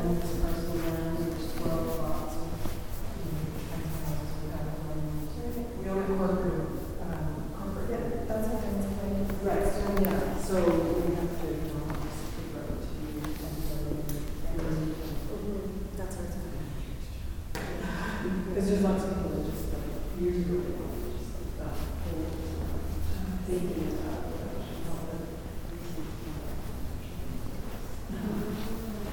in a local modern art gallery, high reflective walls, people talking, footsteps
soundmap international
social ambiences/ listen to the people - in & outdoor nearfield recordings
east 1 avenue, art gallery